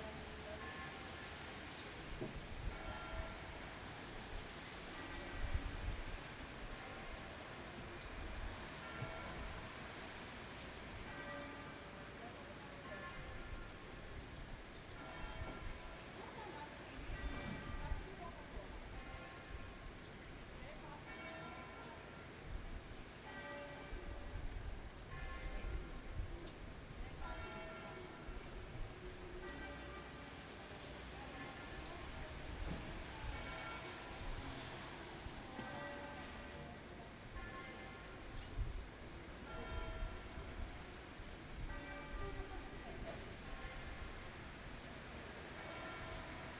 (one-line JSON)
{"title": "malo (vi) - domus artium, dicembre", "date": "2008-12-15 21:24:00", "latitude": "45.66", "longitude": "11.40", "altitude": "121", "timezone": "Europe/Berlin"}